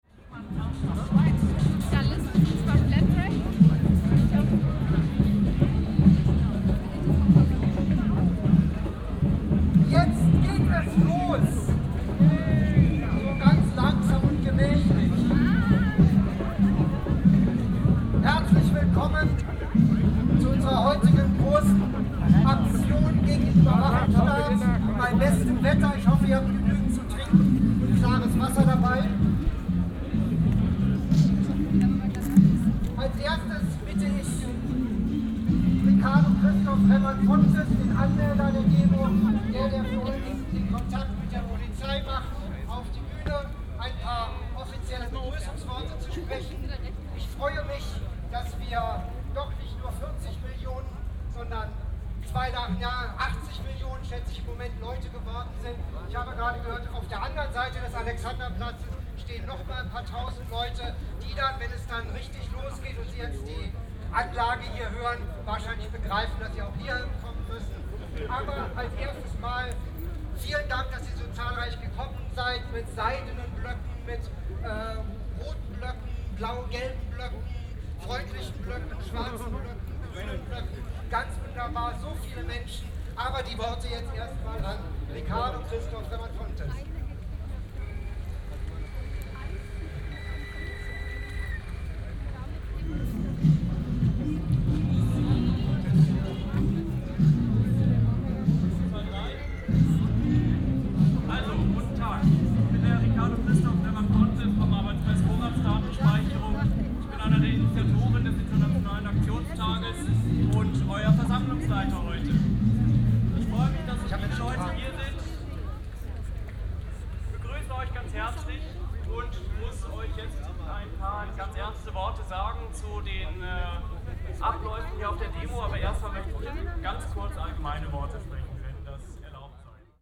11.10.2008 13:40 Demonatration gegen Vorratsdatenspeicherung und Überwachungsstaat
demonstration against governmental data storage and surveillance
Berlin, Germany, 11 October 2008